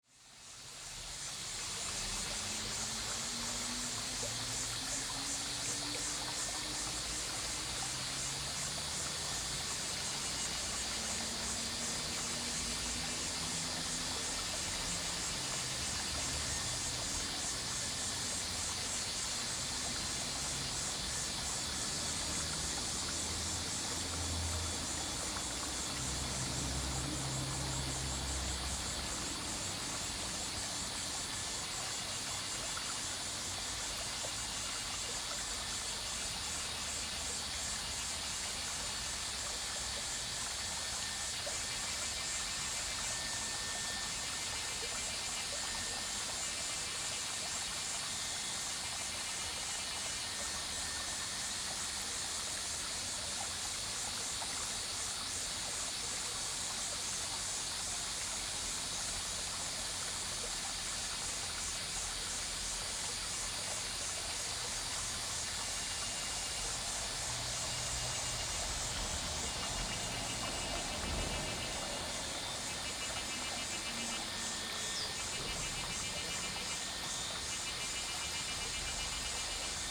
紙寮坑, 桃米里, Taiwan - Next to the river
Cicadas cry, The sound of the river, Traffic Sound
Zoom H2n MS+XY